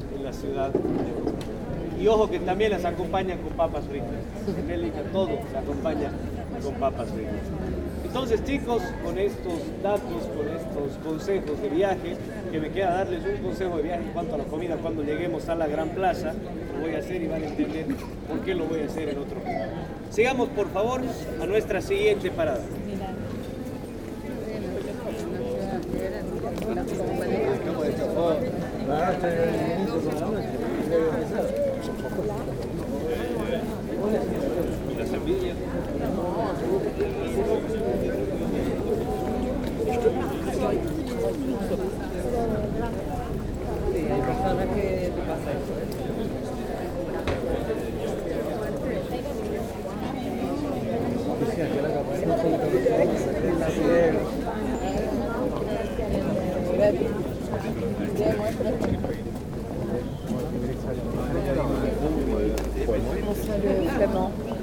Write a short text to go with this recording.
Guided tour of the Brugge city near the Mozarthuys. Very much tourists and a lot of guides showing the way with colourful umbrellas.